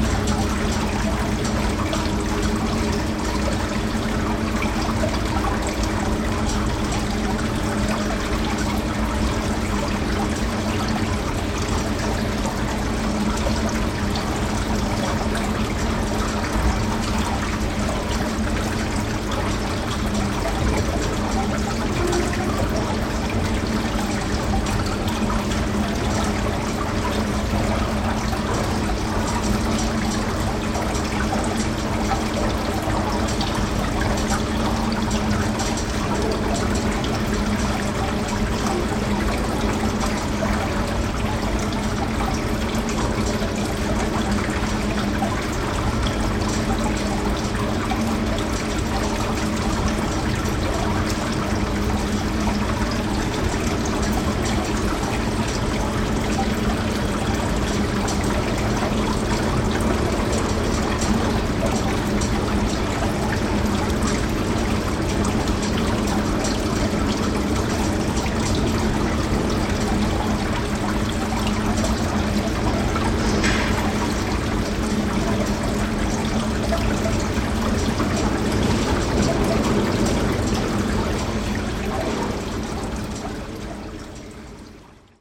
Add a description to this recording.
the city, the country & me: may 28, 2008